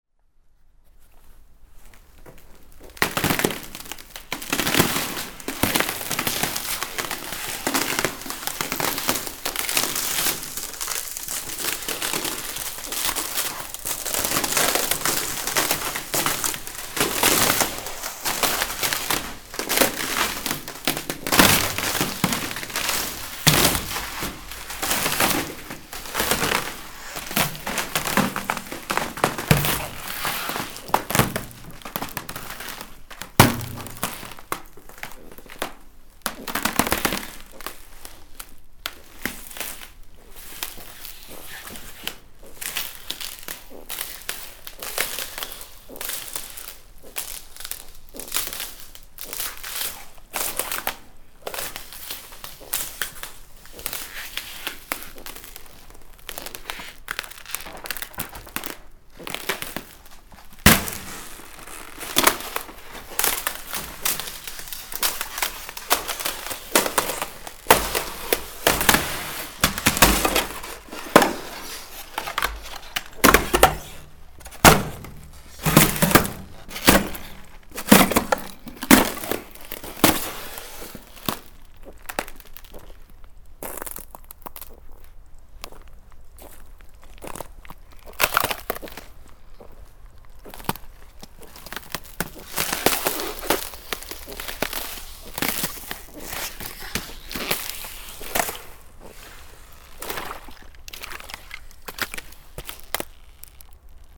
Rumelange, Luxembourg - Breaking ice plates
Walking in big ice plates and breaking it.